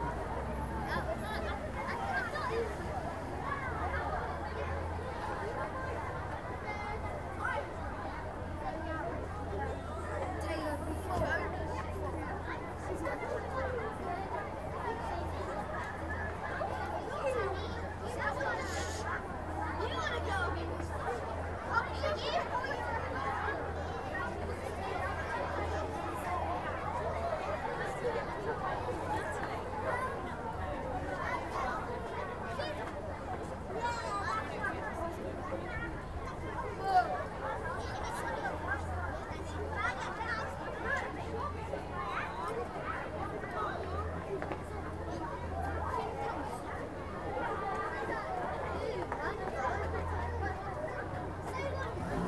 Hand held Zoom H4n recorded in public car park with school party passing through.
Very minimal editing to remove a couple of clicks, normalised to -3Db.